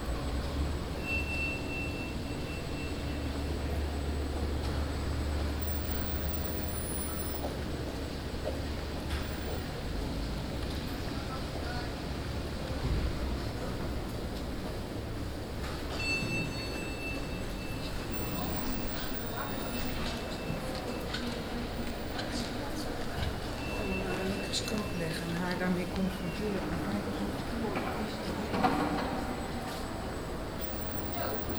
Atrium City Hall in The Hague. A pretty quiet summer afternoon.
Recorded with a Zoom H2 with additional Sound Professionals SP-TFB-2 binaural microphones.